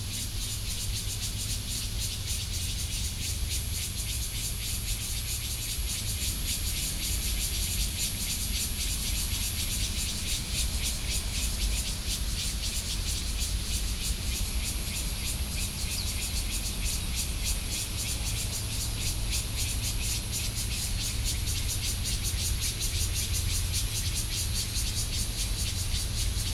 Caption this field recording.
Hot weather, Cicada sounds, Traffic Sound, Binaural recordings, Sony PCM D50 +Soundman OKM II